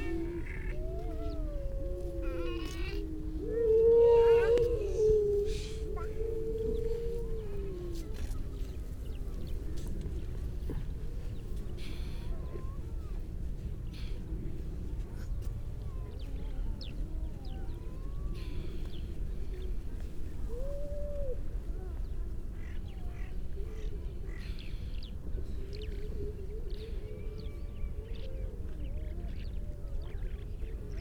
{"title": "Unnamed Road, Louth, UK - grey seals soundscape ...", "date": "2019-12-03 11:43:00", "description": "grey seal soundscape ... mainly females and pups ... parabolic ... bird calls from ... skylark ... wagtail ... redshank ... linnet ... pied wagtail ... curlew ... starling ... all sorts of background noise ... and a human baby ...", "latitude": "53.48", "longitude": "0.15", "altitude": "1", "timezone": "Europe/London"}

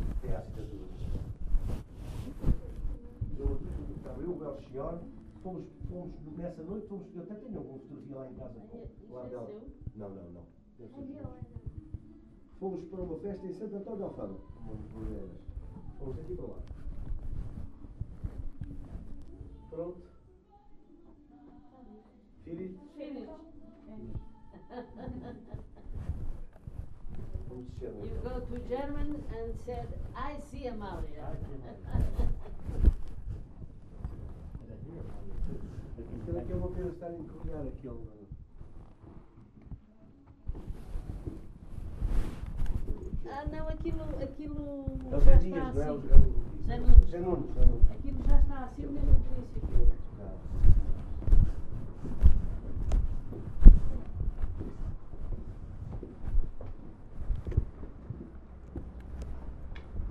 {"title": "Lisboa, Rua de Sao Bento 193", "description": "Tour at Fundacao Amalia Rodrigues Casa Museu", "latitude": "38.72", "longitude": "-9.15", "altitude": "43", "timezone": "Europe/Berlin"}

